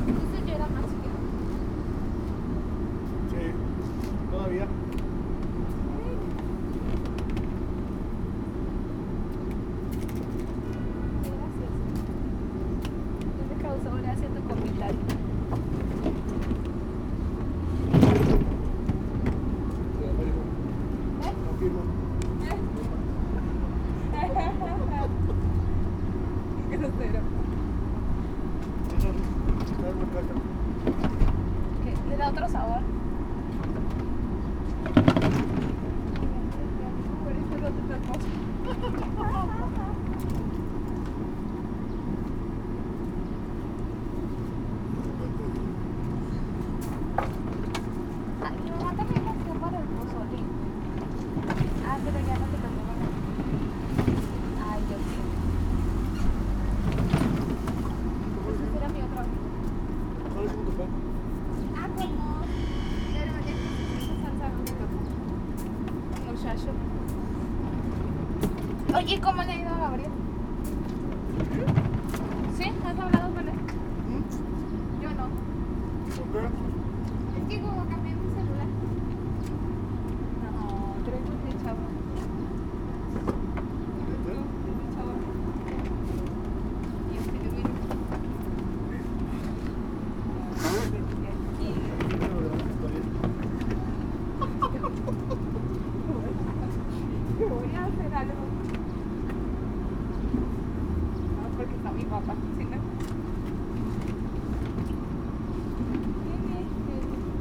Antonio Segoviano LB, Los Paraisos, León, Gto., Mexico - Tortas del Plaza.
I made this recording on February 17th, 2020, at 3:57 p.m.
I used a Tascam DR-05X with its built-in microphones and a Tascam WS-11 windshield.
Original Recording:
Type: Stereo
Esta grabación la hice el 17 de febrero 2020 a las 15:57 horas.